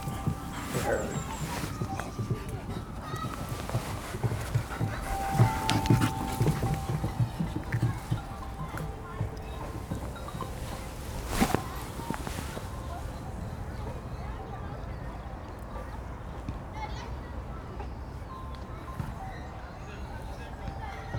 Horniman Museum and Gardens - Fetch with a Dog Called Charlie
I went out to the Horniman Museum and Gardens - home to a 'sound garden' full of instruments for children (and many adults) to play on - to make a simple ambient recording. However, I met a little dog who I made the mistake of throwing a ball for just once, after which he wouldn't leave me alone to record and kept bringing the ball back to me rather than his owner, Sue. We had a lovely time.